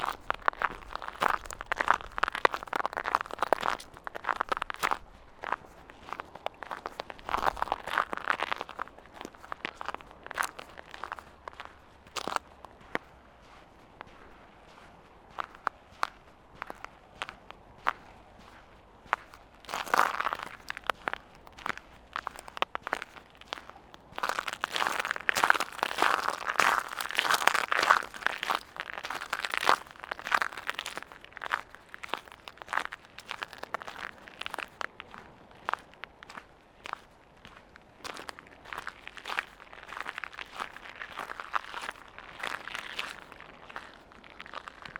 Middelkerke, Belgique - Solen shells
During the low tide on a vast sandy beach, walking on shells. There's a small mountain of solen shells, called in french "knives".